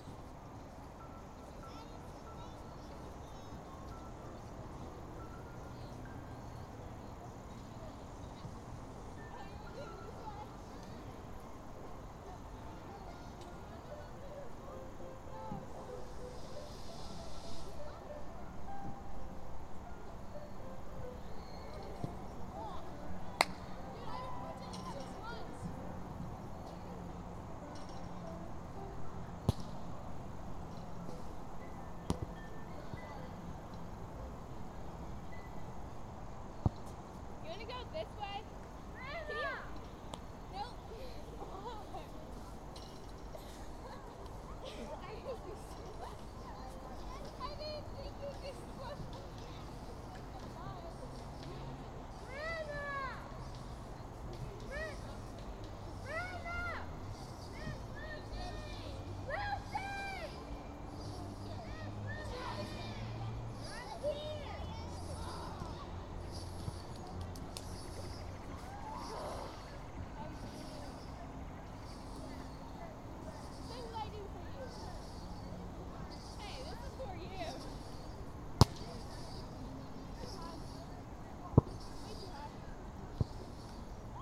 Roswell Rd, Marietta, GA, USA - East Cobb Park - Field
The edge of a park's playing field. There were some older children kicking around a soccer ball, and you can hear kids on the playground swing set to the left. A child can be heard playing the piano under the gazebo behind the recorder. It was a cool, sunny autumn day, and people were out walking and enjoying the weather.
Recorded with the unidirectional mics of the Tascam Dr-100mkiii.